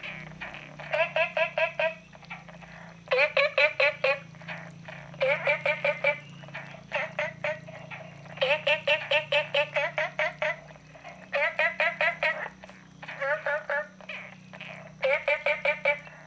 Frogs chirping, at the Hostel, Small ecological pool
Zoom H2n MS+XY
Nantou County, Taiwan, 9 June 2015